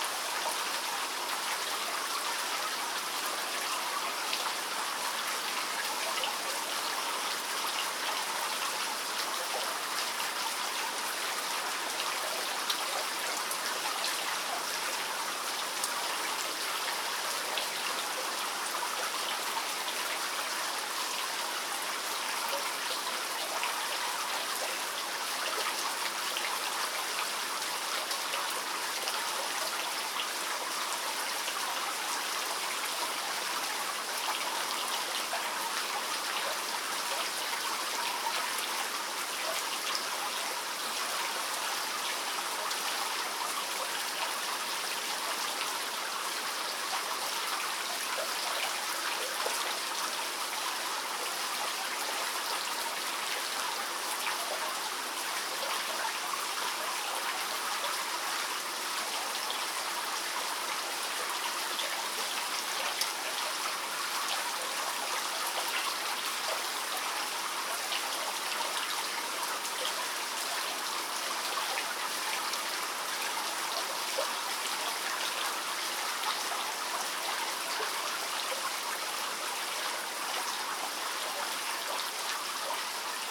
Listening to the dripping walls of mineral water evaporation tower. Night time - no constantly talking crowd inside...
Birštono savivaldybė, Kauno apskritis, Lietuva, 19 June 2022